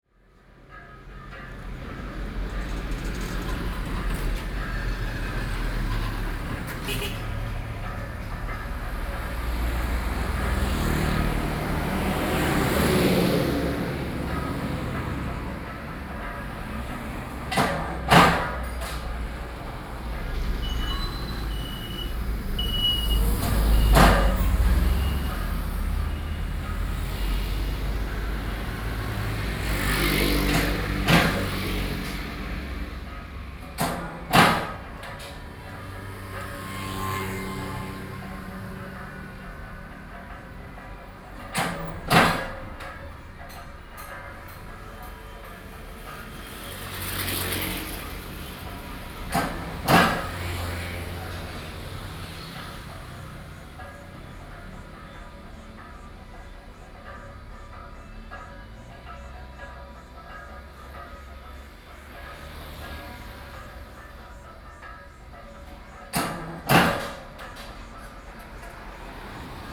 Sec., Xiwan Rd., Xizhi Dist. - a small factory
In a small factory next to the road
Binaural recordings
Sony PCD D50